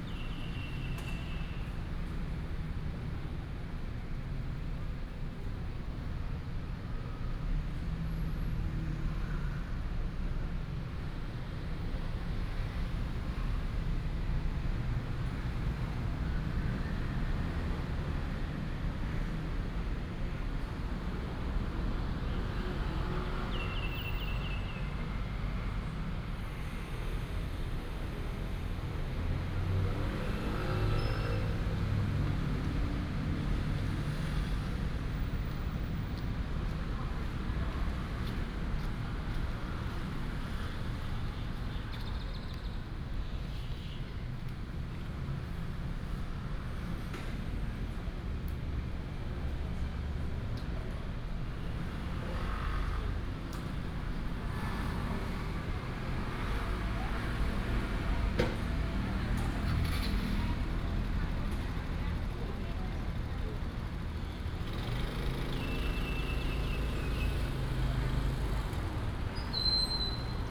大園仁壽宮, Dayuan Dist., Taoyuan City - In the square of the temple
In the square of the temple, Birds sound, Traffic sound
Taoyuan City, Taiwan, 18 August